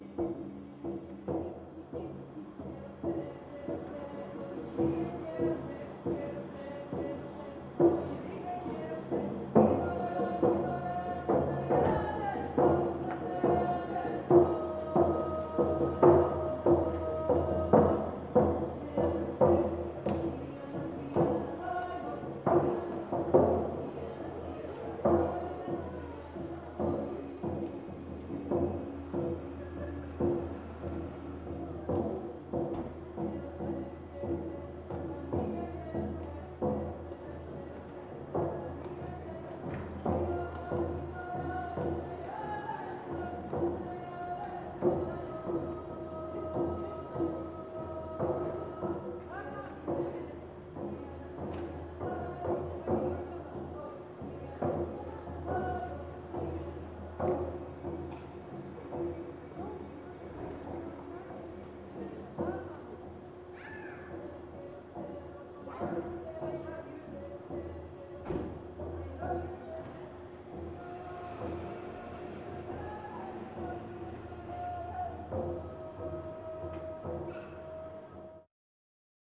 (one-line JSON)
{"title": "Rue de la Cuve, Ixelles, Belgique - Singing in the time of Corona", "date": "2020-04-24 19:32:00", "description": "A family passed in the street. The mothers was singing and playing an instrument, the father pushed the stroller, and the children were playing around.", "latitude": "50.83", "longitude": "4.38", "altitude": "67", "timezone": "Europe/Brussels"}